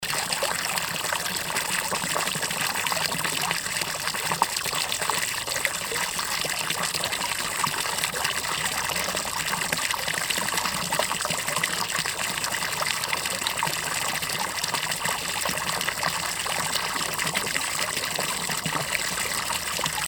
Mosebacke Torg, Fontänen 1m
The Fountain 1m at Mosebacke Torg for World Listening Day.